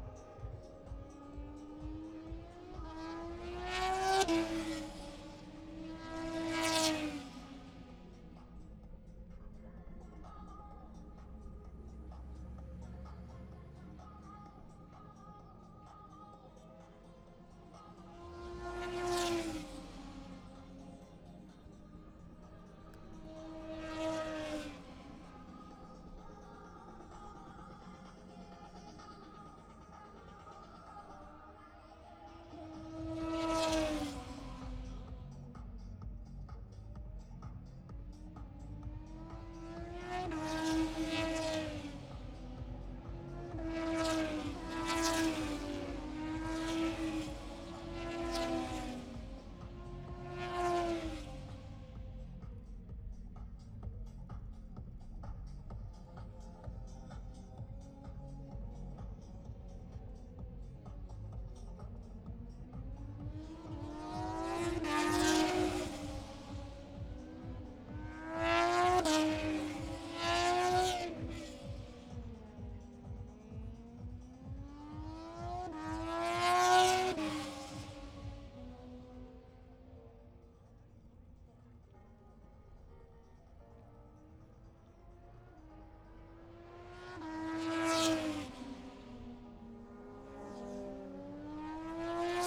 british motorcycle grand prix 2022 ... moto two free practice three ... bridge on wellington straight ... dpa 4060s clipped to bag to zoom h5 ... plus disco ...
6 August 2022, ~11:00